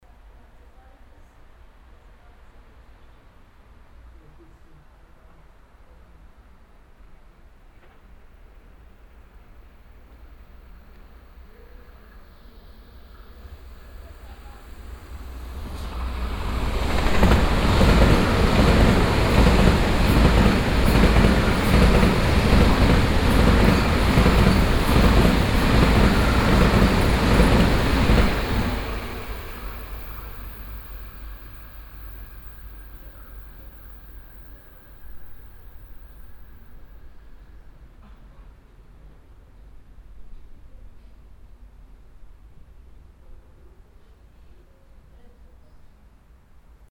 rudolstadt, station, ICE passing
At the station. The sound of an Intercity Train passing by. Unfortunately for the citizen of Rudolstadt the Intercity Trains don stop here but only drive thru the station..
soundmap d - topographic field recordings and social ambiences